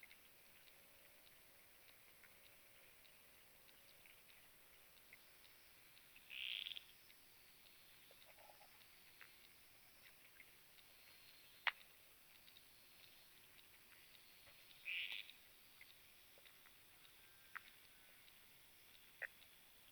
Utena aeroclub, Lithuania, in the pond
15 October, ~14:00